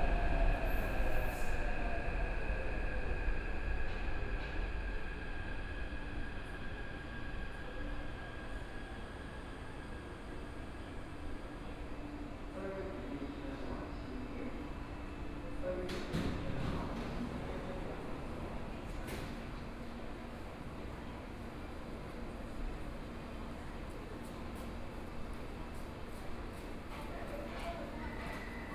walking To MRT, Traffic Sound, Motorcycle Sound, Pedestrians on the road, Aircraft flying through, Binaural recordings, Zoom H4n+ Soundman OKM II